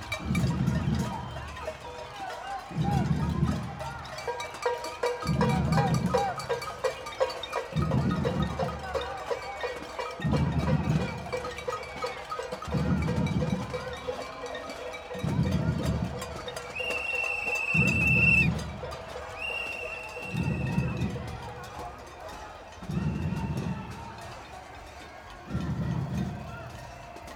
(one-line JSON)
{"title": "Französische Straße, Berlin, Deutschland - sounds of demonstration", "date": "2019-01-19 14:05:00", "description": "Berlin, Französische Straße, sounds of a demonstration against industrial agriculture, and for an ecological agricultural change\n(Sony PCM D50)", "latitude": "52.52", "longitude": "13.40", "altitude": "36", "timezone": "Europe/Berlin"}